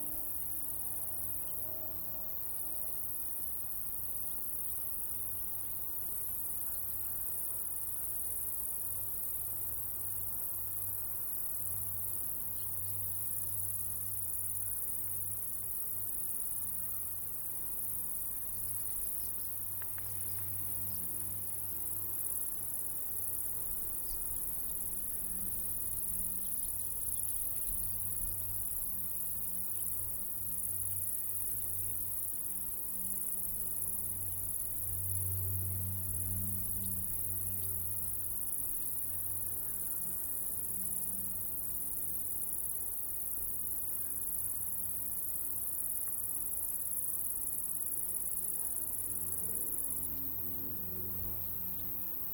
Best listening results on headphones.
Vibrant choral voices of insects within stereo field, dotted bird vocalizations, air drones.
Recording and monitoring gear: Zoom F4 Field Recorder, LOM MikroUsi Pro, Beyerdynamic DT 770 PRO/ DT 1990 PRO.